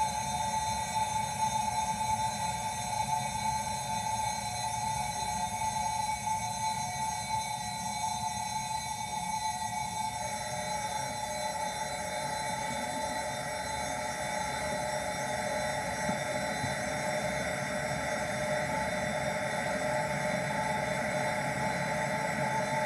Concert at Der Kanal, Weisestr. - Der Kanal, Das Weekend zur Transmediale: Baignoire
Engineering sound memory manipulator and dear neighbour of DER KANAL, Baignoire, performs live from in to the outside making us look like dreaming sheep, so much did it astonish to travel in sonic spheres like these.
Deutschland, European Union, 29 January 2011